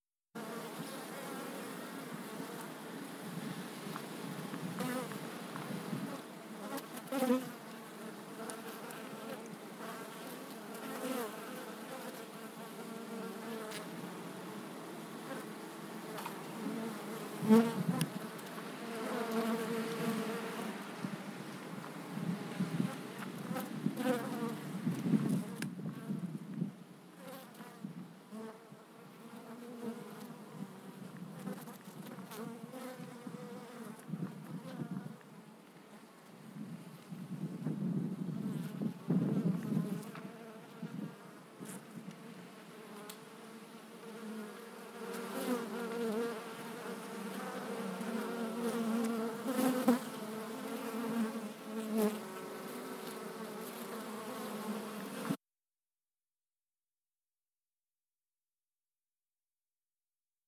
Langel, Köln, Deutschland - Bienen im Februar / Bees in February
Bei 16°C fliegen die Bienen auch im Februar, um Haselnusspollen zu sammeln.
At 16 ° C the bees fly in February to collect hazelnut pollen.